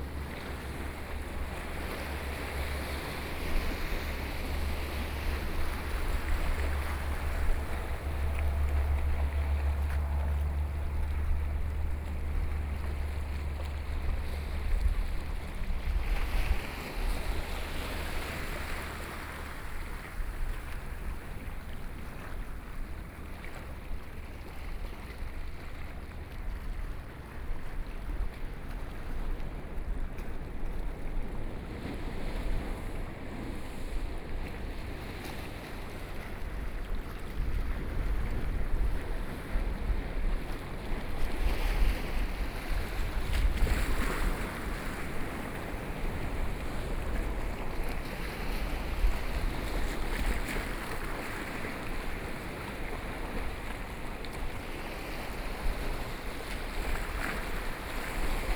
萊萊地質區, Gongliao District - Sound of the waves
on the coast, Sound of the waves, Traffic Sound, Hot weather